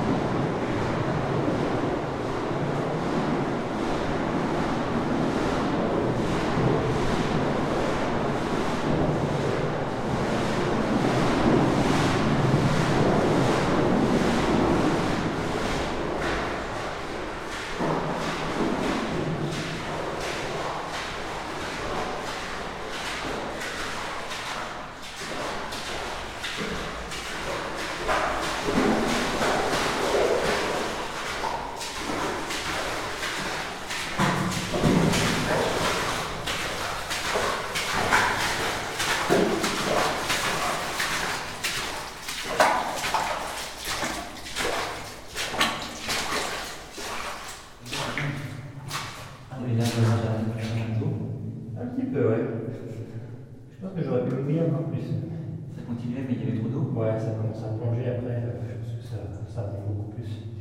Esch-sur-Alzette, Luxembourg - Deep mine
Exploring a very deep tunnel in the Ellergrund mine. We are in the called green ore layer. It's the deeper layer of the mine, which counts 8 levels : the green, the black, the brown, the grey, the red, the wild red, the yellow and the wild yellow. Unfortunately for us, as it's very deep, there's a lot of water. We are trying to cross a flooded district.